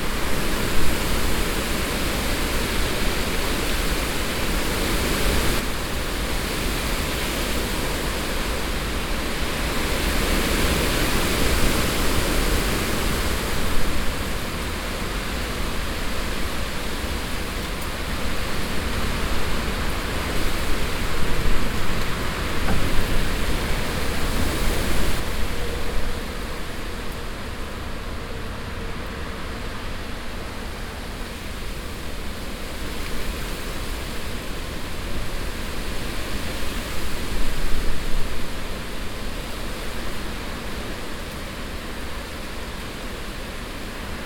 In the early evening of a hot summer day. A strong wind coming up shaking the trees and leaves.
topographic field recordings - international ambiences and scapes
Aubignan, France, 29 August 2011, 17:32